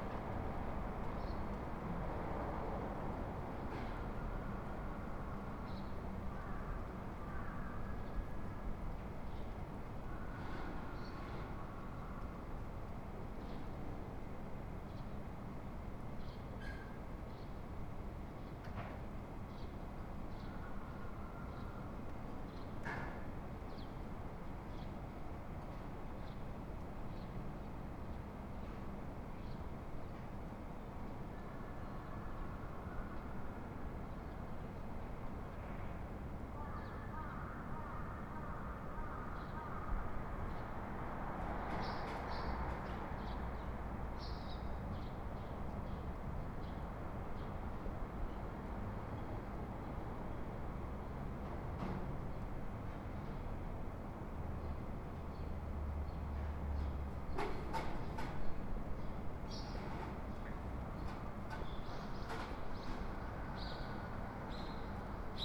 Tokyo, Kanda Jinbocho, hotel Villa Fontaine - morning sounds out of the window
early morning sounds recorded out of the window of the hotel. mainly garbage man and small restaurants owners cleaning the street, delivery trucks passing pay. very characteristic cawing in the very distance.
北葛飾郡, 日本, 27 March 2013